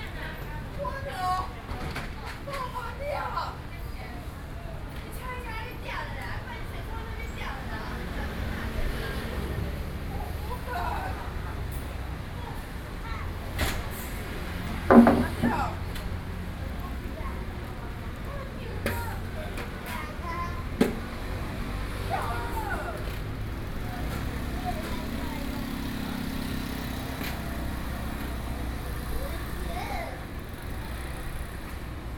Sanchong, New Taipei city - Store
New Taipei City, Taiwan